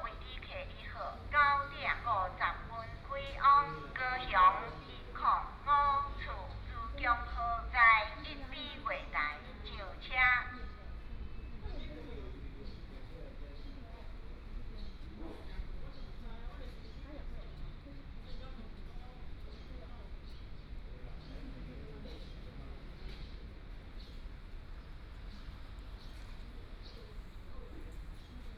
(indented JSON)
{
  "title": "員林火車站, Yuanlin City - On the platform",
  "date": "2017-01-25 09:46:00",
  "description": "On the platform, The train arrives",
  "latitude": "23.96",
  "longitude": "120.57",
  "altitude": "32",
  "timezone": "GMT+1"
}